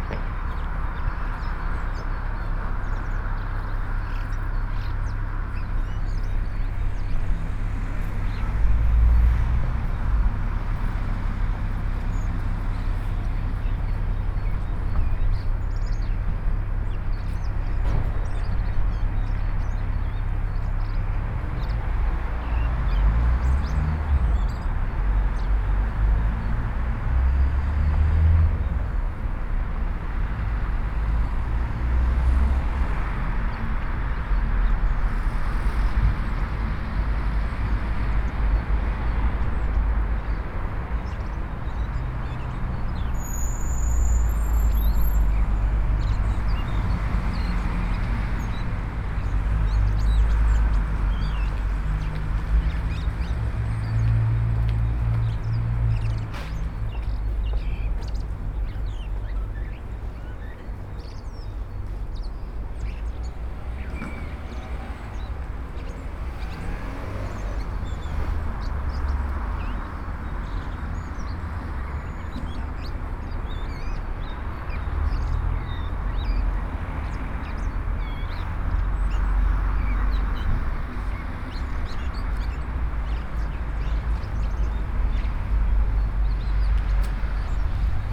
Blackbird and Siren, Altitude, Brussels - Blacbird and Siren, Altitude 100, Brussels
Blackbird and Siren, Altitude 100, Brussels. Merle et Sirène à l'Altitude 100.
Forest, Belgium, 27 January 2009